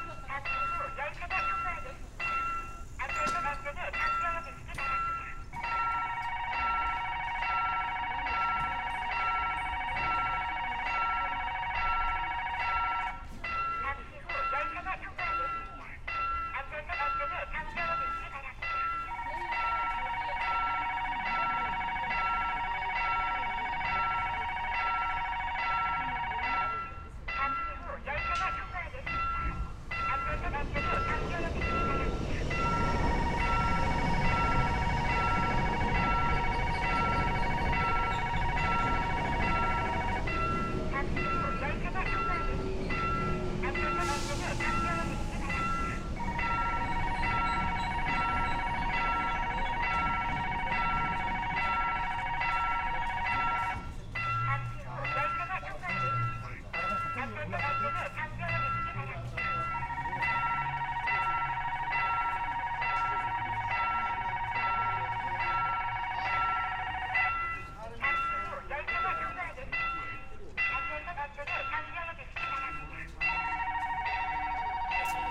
Hankuk Univ. of Foreign Studies Station - 외대앞 crossing alarm
One of the few level crossings in Seoul...there have always been crossing guides there on my (few) visits...
Imun, Seoul, South Korea, February 2019